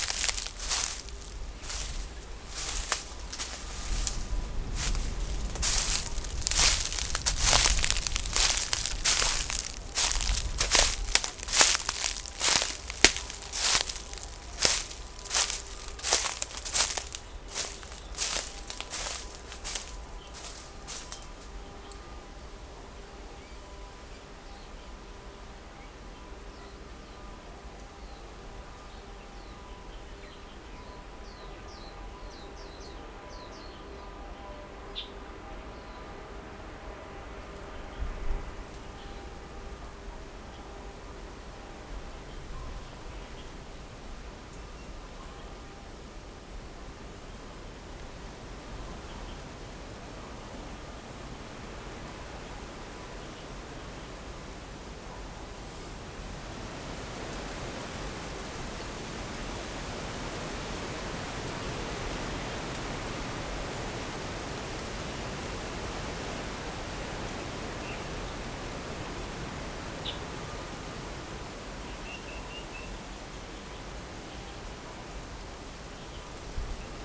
Houliao, Fangyuan Township - Under the tree
The sound of the wind, Foot with the sound of leaves, Dogs barking, Birdsong, Distant factory noise, Little Village, Zoom H6
6 January 2014, ~16:00